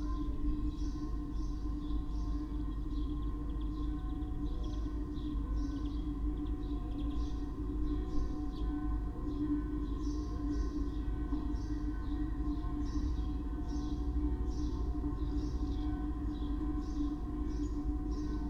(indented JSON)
{
  "title": "from/behind window, Mladinska, Maribor, Slovenia - saturday noon siren, pigeons, swallows, glass bowl",
  "date": "2014-05-03 12:00:00",
  "latitude": "46.56",
  "longitude": "15.65",
  "altitude": "285",
  "timezone": "Europe/Ljubljana"
}